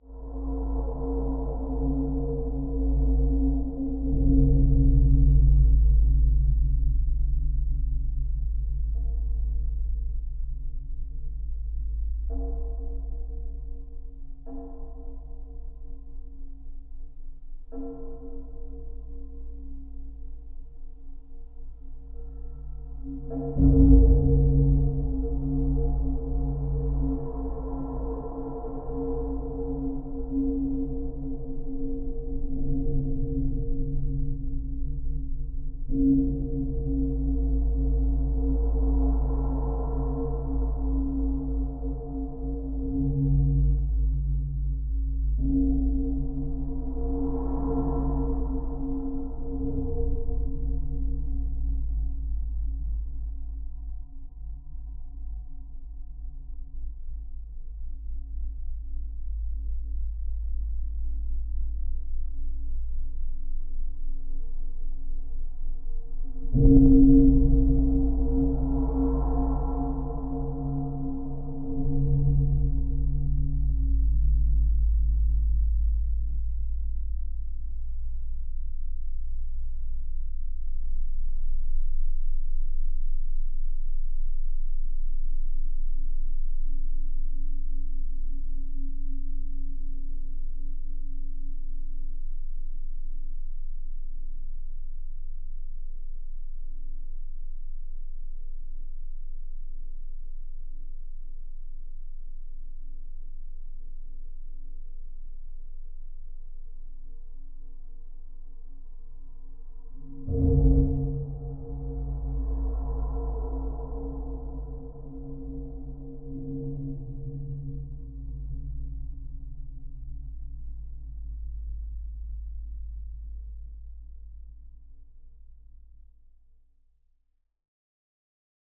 Kintai, Lithuania, the bridge
Geophone on metallic part of Minija's river bridge
Klaipėdos apskritis, Lietuva, July 2022